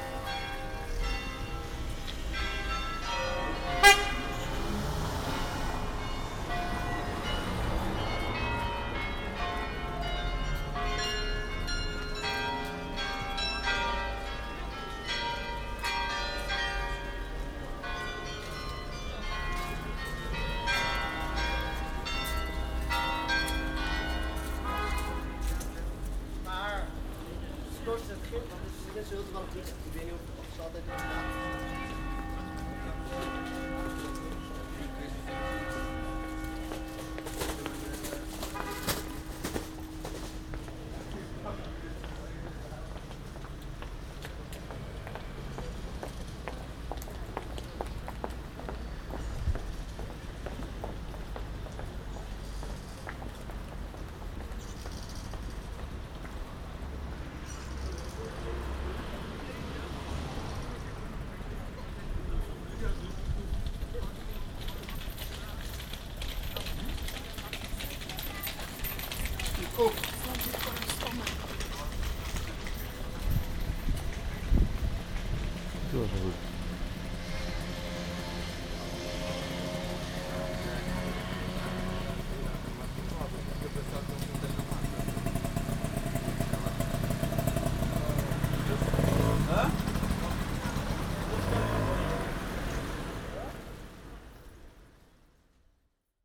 Halstraat, Den Haag. - City sounds
A very dense recording with many different sounds. Recorded walking from the Grote Halstraat to the Torenstraat.
21 November 2010, 14:00